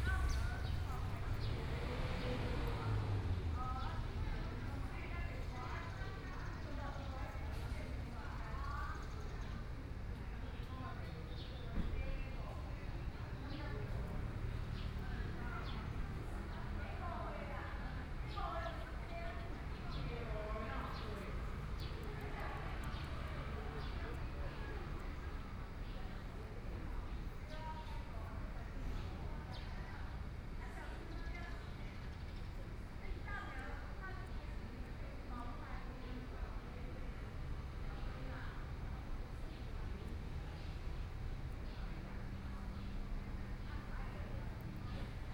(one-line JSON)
{"title": "XinLu Park, Taipei City - Park entrance", "date": "2014-04-27 10:40:00", "description": "Birds singing, The woman's voice chat, Traffic Sound, Dogs barking\nSony PCM D50+ Soundman OKM II", "latitude": "25.07", "longitude": "121.53", "altitude": "14", "timezone": "Asia/Taipei"}